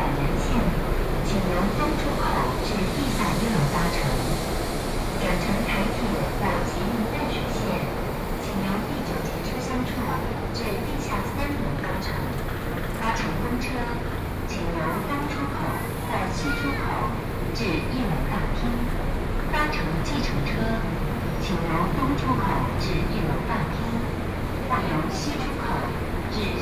Taipei Main Station, Taiwan - Platform
walking in the Platform, Zoom H4n+ Soundman OKM II